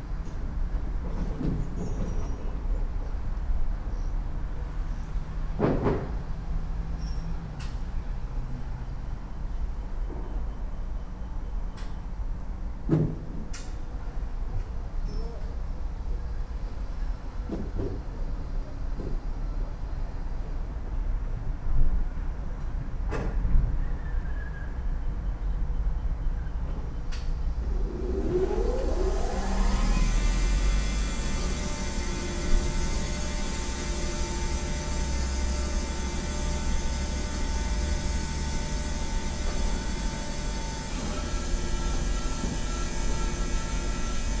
at the gate of a wood processing plant. saw machinery sounds and wood rumble coming from a big shed. i was lucky to catch the sound of the machinery starting and winding down. (roland r-07 internal mics)
Śrem, Poland